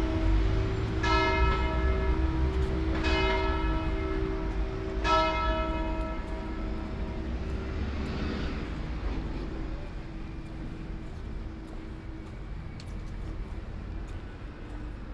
Ascolto il tuo cuore, città. I listen to your heart, city. Several chapters **SCROLL DOWN FOR ALL RECORDINGS** - It’s six o’clock with bells on Thursday in the time of COVID19 Soundwalk
"It’s six o’clock with bells on Thursday in the time of COVID19" Soundwalk
Chapter XXXVIII of Ascolto il tuo cuore, città. I listen to your heart, city
Thursday April 9th 2020. San Salvario district Turin, walking to Corso Vittorio Emanuele II and back, thirty days after emergency disposition due to the epidemic of COVID19.
Start at 5:46 p.m. end at 6:18 p.m. duration of recording 31'44''
The entire path is associated with a synchronized GPS track recorded in the (kmz, kml, gpx) files downloadable here:
Piemonte, Italia